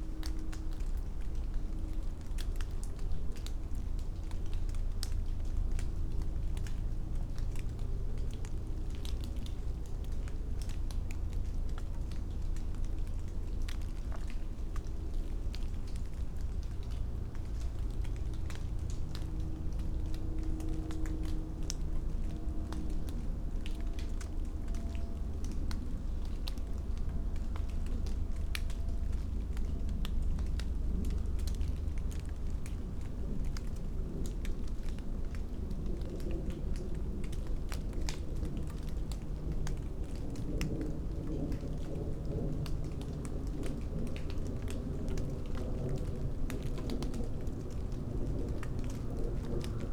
Beselich Niedertiefenbach, Deutschland - night ambience, light rain
place revisited, night ambience
(Sony PCM D50, Primo EM 172)
Beselich, Germany, 23 December 2021